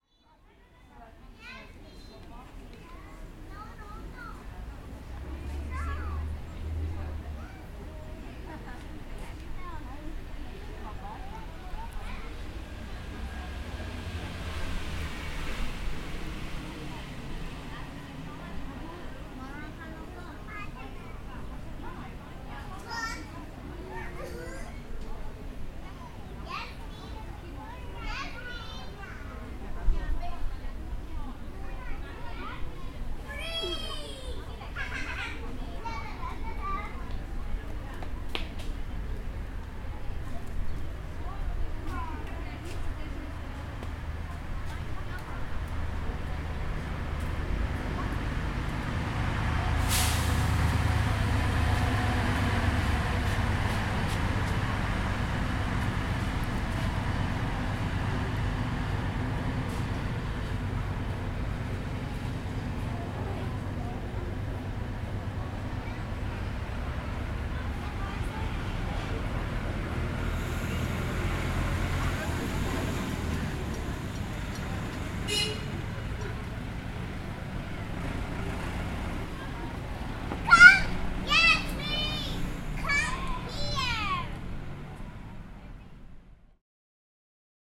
Children playing and chatting. The sound of motorbikes, cars and buses near by. The square is situated next to Agoniston Politechiniou street.
Kerkira, Greece, 16 April 2019, ~12:00